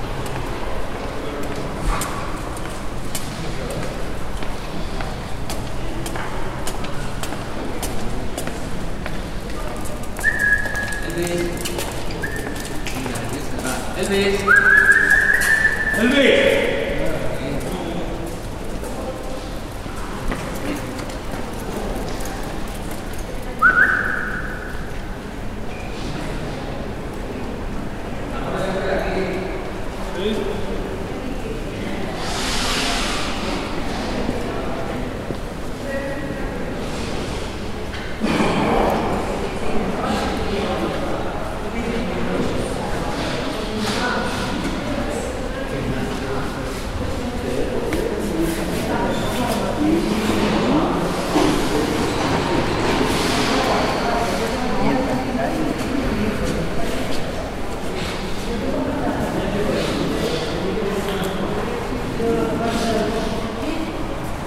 bilbao santiago cathedral
The sound in the rear vaulted porch of the cathedral of santiago in bilbao.